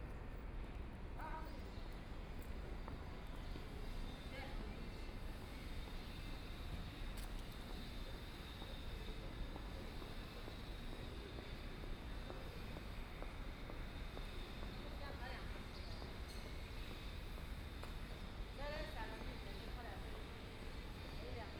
Pudong New Area, Shanghai - In the Atrium Plaza
In the Atrium Plaza, Discharge, The crowd, Electric cars, Binaural recording, Zoom H6+ Soundman OKM II